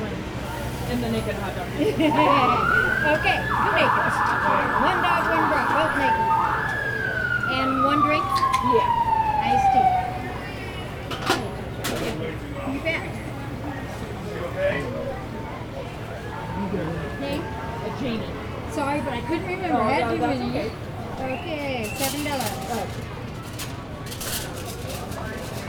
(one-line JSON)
{"title": "neoscenes: parade from hot dog stand", "latitude": "34.54", "longitude": "-112.47", "altitude": "1626", "timezone": "Europe/Berlin"}